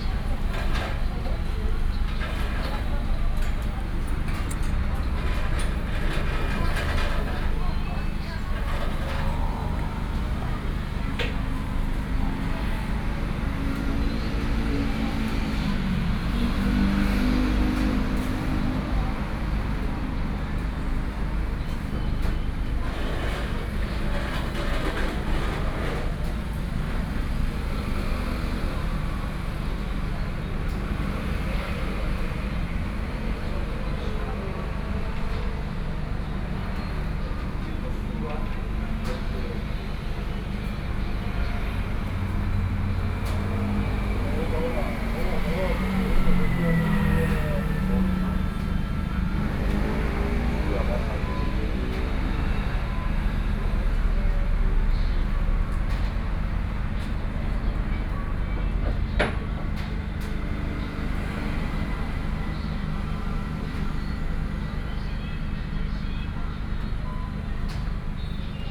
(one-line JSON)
{"title": "Changsha St., Taitung City - In front of the convenience store", "date": "2014-09-05 20:19:00", "description": "In front of the convenience store, Traffic Sound", "latitude": "22.75", "longitude": "121.14", "altitude": "15", "timezone": "Asia/Taipei"}